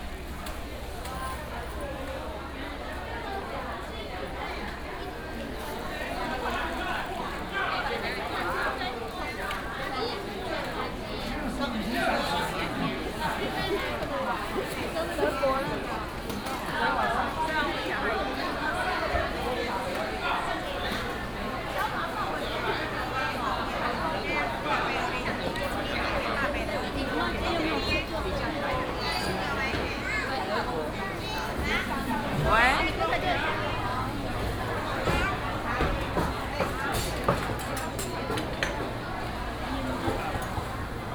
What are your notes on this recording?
Walking through the market, Traffic sound